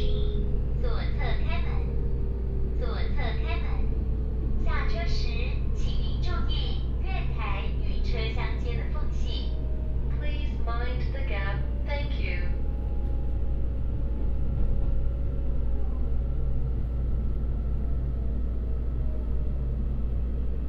Yuanlin City, Changhua County - In a railway carriage
In a railway carriage, from Yuanlin Station to Yongjing Station
12 May 2016, 14:13